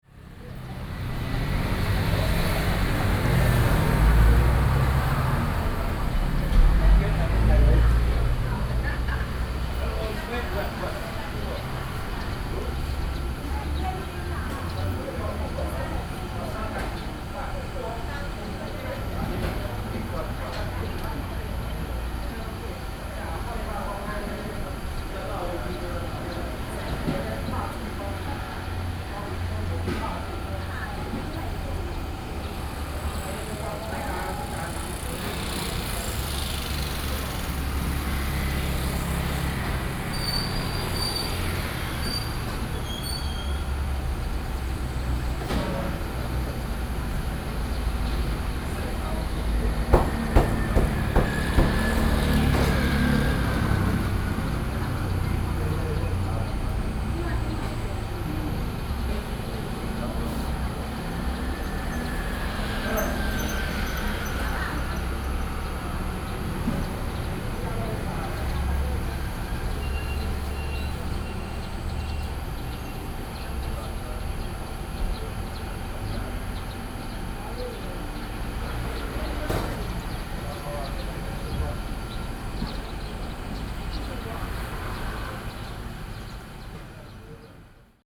Dizheng St., Shulin Dist., New Taipei City - Small traditional market
Small traditional market, traffic sound
Sony PCM D50+ Soundman OKM II
New Taipei City, Taiwan, 20 June 2012, 09:41